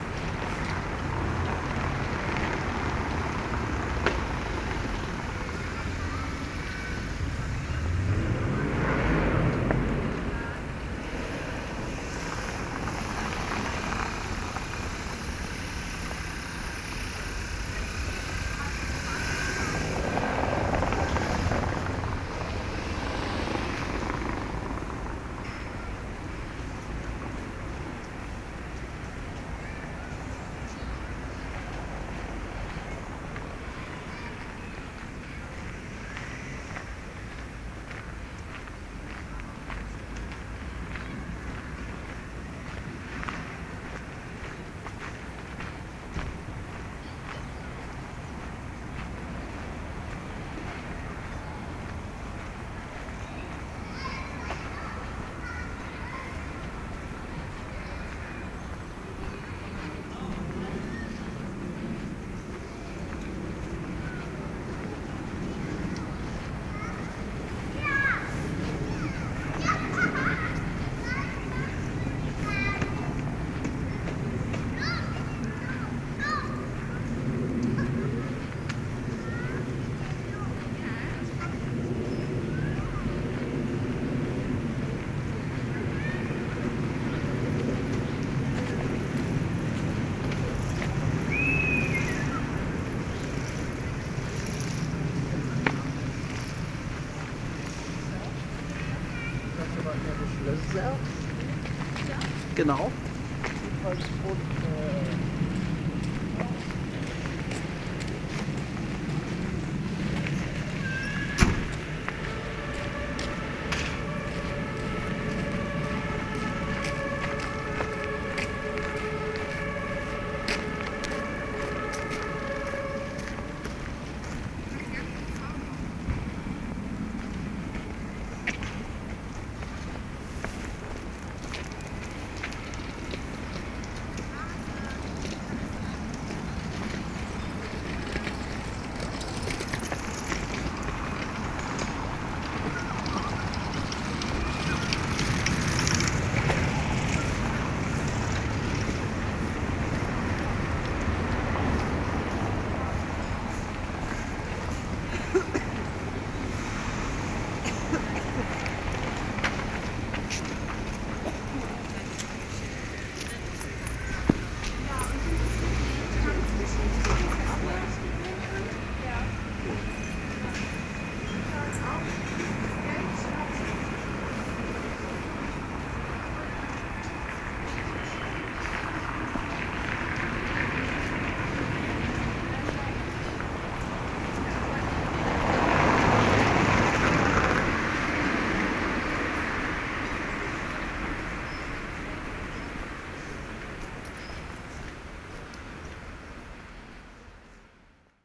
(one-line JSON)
{"title": "Grimmstraße, Berlin, Deutschland - Soundwalk Grimmstrasse", "date": "2018-02-09 15:15:00", "description": "Soundwalk: Along Grimmstrasse\nFriday afternoon, sunny (0° - 3° degree)\nEntlang Grimmstrasse\nFreitag Nachmittag, sonnig (0° - 3° Grad)\nRecorder / Aufnahmegerät: Zoom H2n\nMikrophones: Soundman OKM II Klassik solo", "latitude": "52.49", "longitude": "13.42", "altitude": "36", "timezone": "Europe/Berlin"}